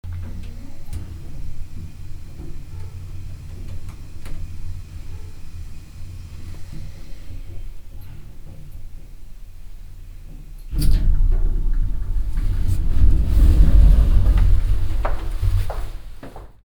lippstadt, lippischer hof, elevator - lippstadt, lippischer hof, elevator 02
the fascinating and spooky sound of a hotel elevator driving up
soundmap nrw - social ambiences and topographic field recordings